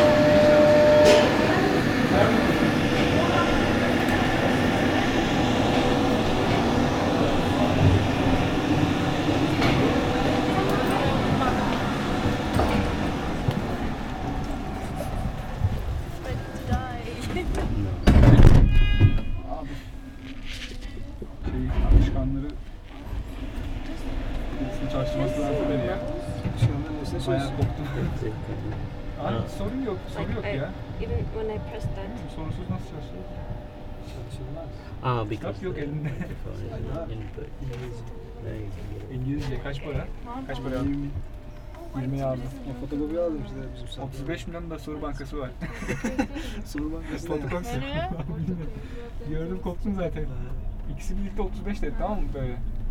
The Teleferik is a gondola that passes of Macka Park